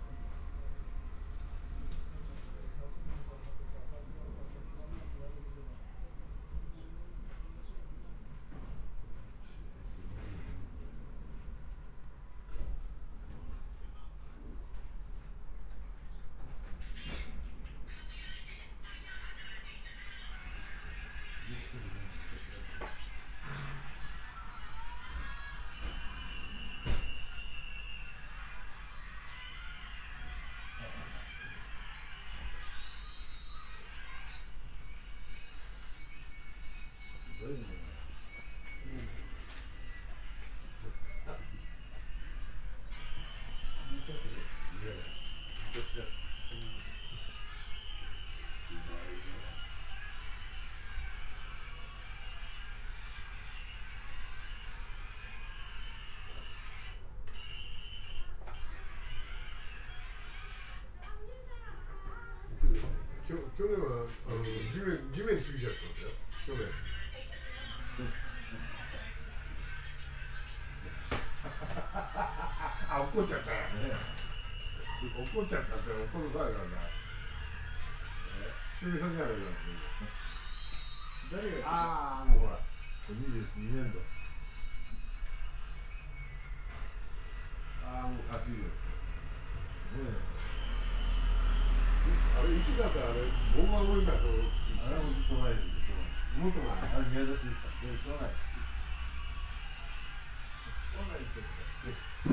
{
  "title": "chome asakusa, tokyo - antique shop",
  "date": "2013-11-09 17:41:00",
  "description": "two gentlemen watching television and laughing, slide doors",
  "latitude": "35.72",
  "longitude": "139.80",
  "altitude": "11",
  "timezone": "Asia/Tokyo"
}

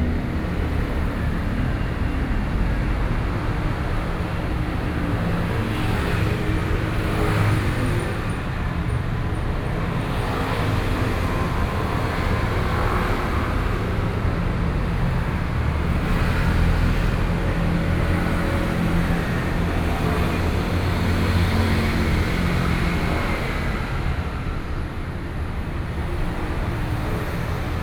{
  "title": "Taoyuan, Taiwan - Traffic noise",
  "date": "2013-09-11 08:05:00",
  "description": "Hours of traffic noise, Sony PCM D50 + Soundman OKM II",
  "latitude": "24.99",
  "longitude": "121.32",
  "altitude": "97",
  "timezone": "Asia/Taipei"
}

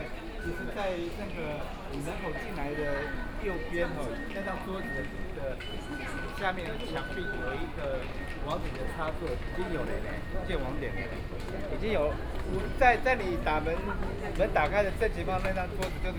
{
  "title": "106台灣台北市大安區 - Wenshan Line (Taipei Metro)",
  "date": "2013-10-28 15:46:00",
  "description": "from Technology Building Station to Zhongxiao Fuxing station, Binaural recordings, Sony PCM D50 + Soundman OKM II",
  "latitude": "25.03",
  "longitude": "121.54",
  "altitude": "23",
  "timezone": "Asia/Taipei"
}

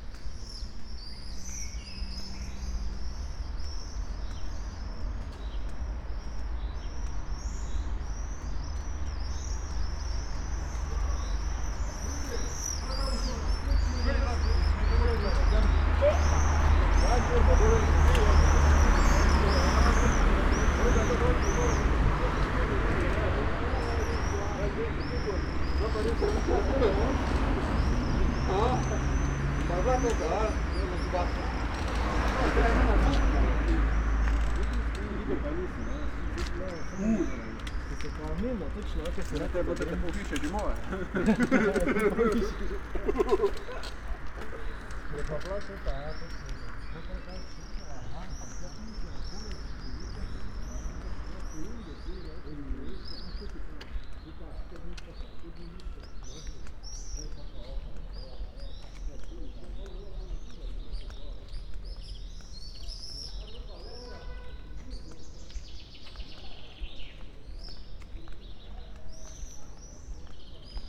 {
  "title": "Mladinska ulica, Maribor - swifts",
  "date": "2013-06-15 20:24:00",
  "description": "summer evening, swifts, outgoers ....",
  "latitude": "46.56",
  "longitude": "15.65",
  "altitude": "285",
  "timezone": "Europe/Ljubljana"
}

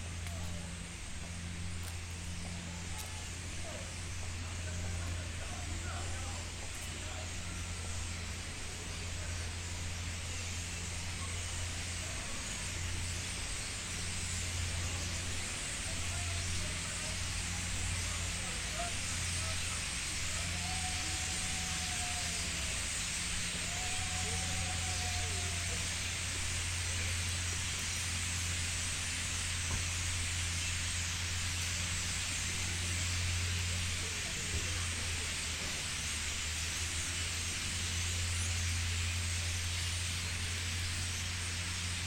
{
  "title": "Ouchy Hafen, Starengesang",
  "date": "2011-10-02 18:20:00",
  "description": "Ohrenbetäubender Starengesang am Hafen in Ouchy /Lausanne am Genfersee",
  "latitude": "46.51",
  "longitude": "6.63",
  "altitude": "381",
  "timezone": "Europe/Zurich"
}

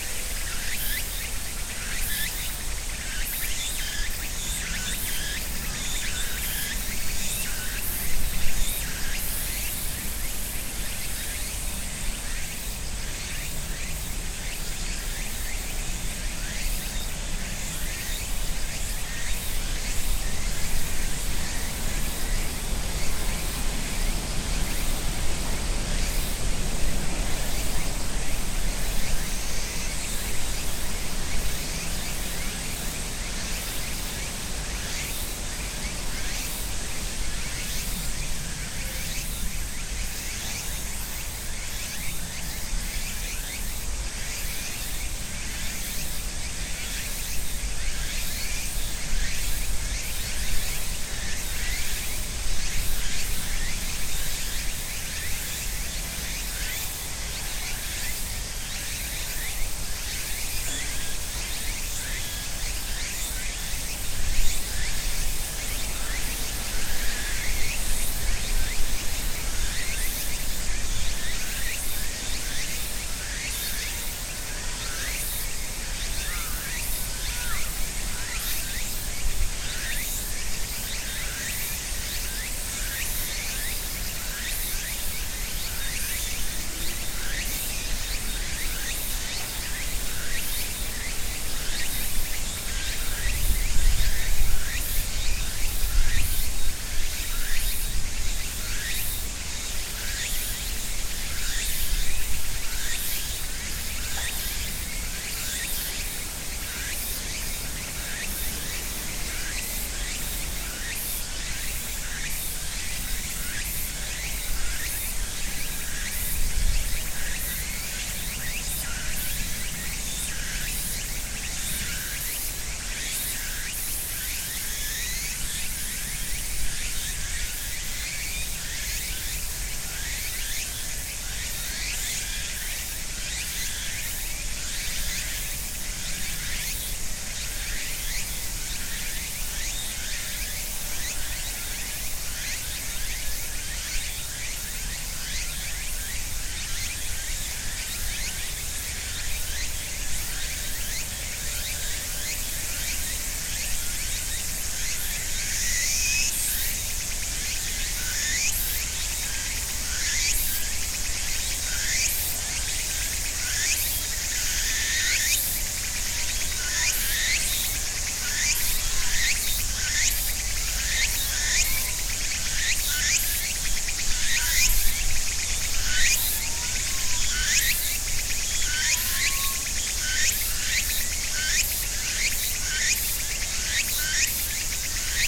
{
  "title": "Omifuji, Yasu-shi, Shiga-ken, Japan - Cicadas at the end of Summer",
  "date": "2017-09-15 13:13:00",
  "description": "Cicadas and other insects mark the end of summer in ornamental cherry trees between a small river and a residential neighborhood. We can also hear traffic, agricultural machinery (rice harvester), and other human sounds. Recorded with a Sony PCM-M10 recorder and FEL Clippy EM172 mics mounted on opposite sides of a tree trunk about 20cm in diameter.",
  "latitude": "35.04",
  "longitude": "136.03",
  "altitude": "113",
  "timezone": "Asia/Tokyo"
}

